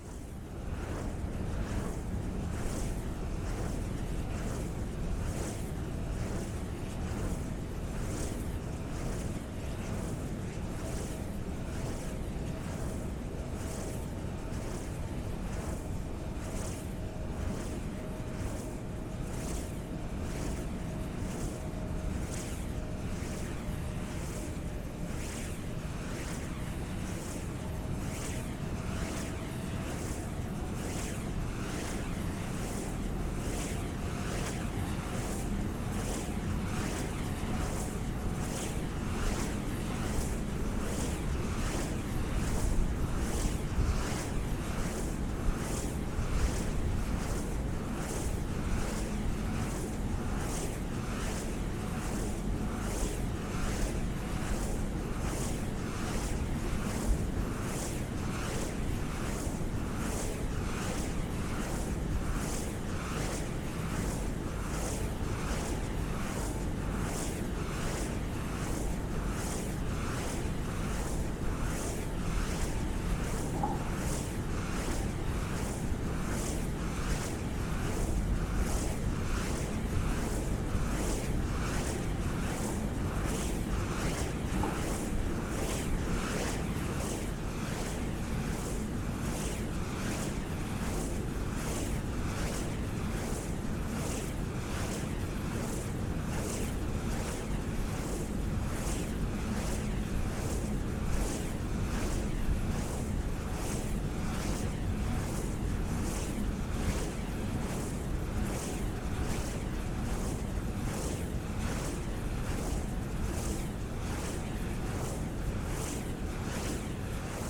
warns, skarlerdyk: wind turbine - the city, the country & me: wind turbine
wind turbine
the city, the country & me: june 15, 2014